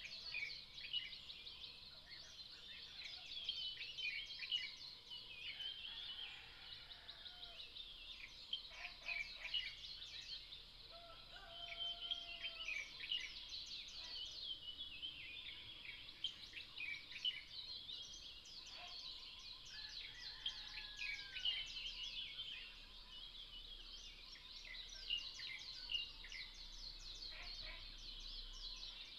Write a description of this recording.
In the morning, Bird calls, Crowing sounds, at the Hostel